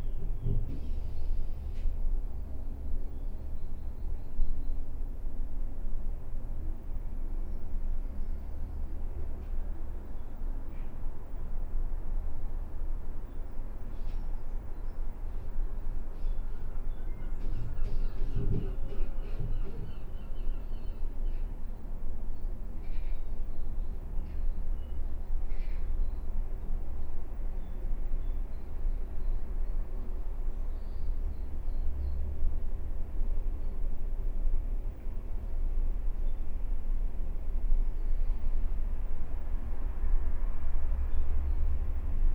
Cressingham Rd, Reading, UK - The Retreat Cabin
A ten minute meditation in the retreat cabin at the bottom of the garden of Reading Buddhist Priory (Spaced pair of Sennheiser 8020s + SD MixPre6)
30 January 2018, 12:50